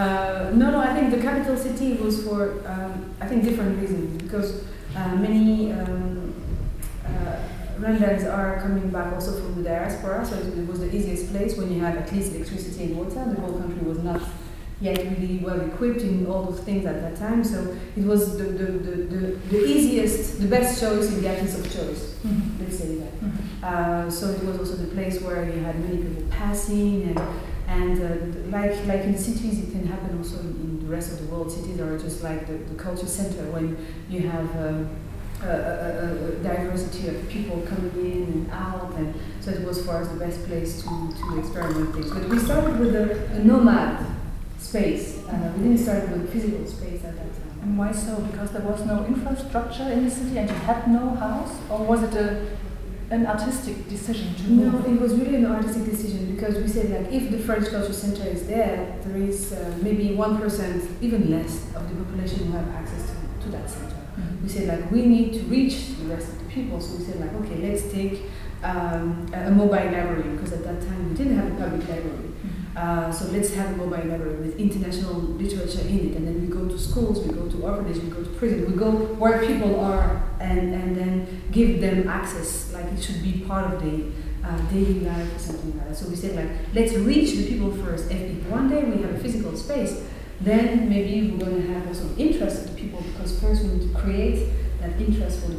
Helios Theater, Hamm, Germany - What do you know about Rwanda…
After-performance talk by the team of Ishyo Art Centre Kigali. Carole Karemera and her team of actors had come to Hamm for a week as guests of the Helios Children Theatre and the “hellwach” (bright-awake) 6th International Theatre Festival for young audiences.
With Carole Karemera, Michael Sengazi and Solange Umhire (Ishyo Art Centre), moderated by Birte Werner of the “Bundesakademie fur Kulturelle Bildung” (academy of cultural education); introduced by Michael Lurse (Helios Theater).
The entire talk is archived here: